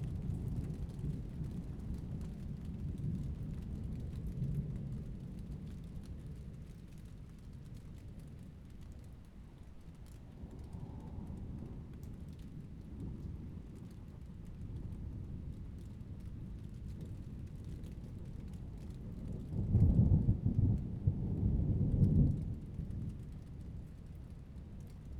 a continues artillery of thunderstorms, rolling ceaselessly for an entire evening, fading in and out, triggering car alarms